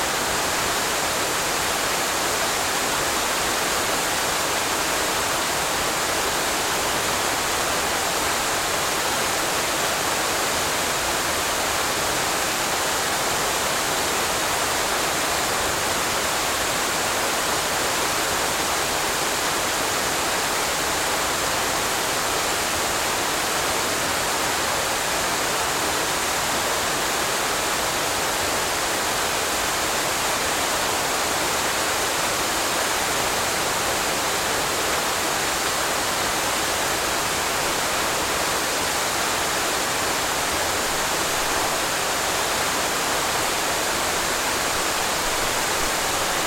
Garrison, NY, USA - 5 feet away from a waterfall
Natural white noise. 5 feet away from a waterfall.